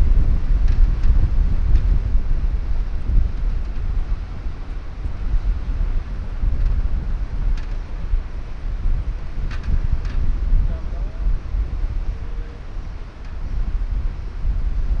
audresseles, strassenlaterne im wind
mittags, strassenlaterne im konstanten starkwind
fieldrecordings international:
social ambiences, topographic fieldrecordings